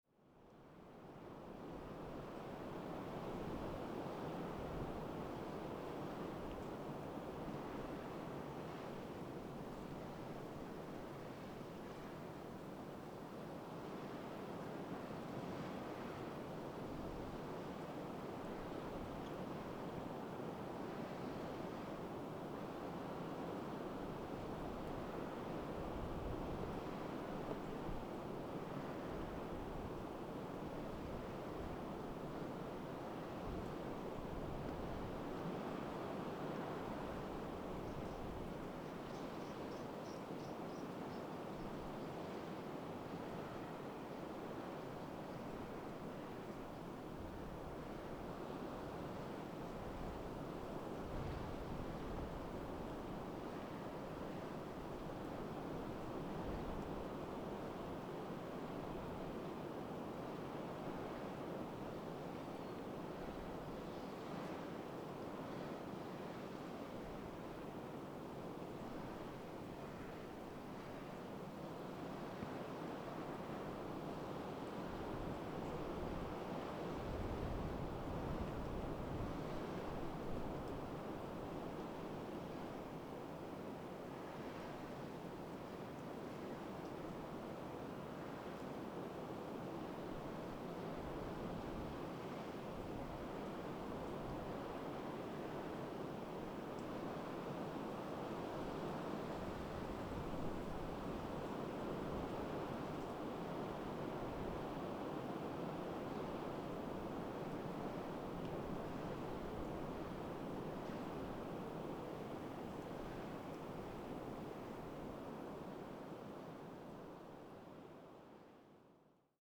San Isidro lighthouse, wind SW 20 km/h
San Isidro lighthouse is located near Punta Arenas in Chile. It’s the southernmost lighthouse on the mainland continent. Lit in 1904, it’s part of a chain of eight century old lighthouses placed along the shores of the Strait of Magellan.
Punta Arenas, Región de Magallanes y de la Antártica Chilena, Chile, 16 March 2019